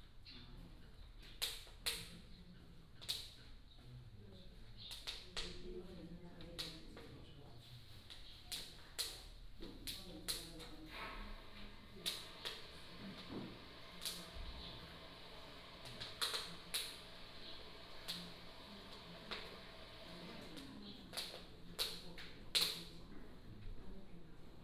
Small village, Small pier, In the visitor center
Penghu County, Baisha Township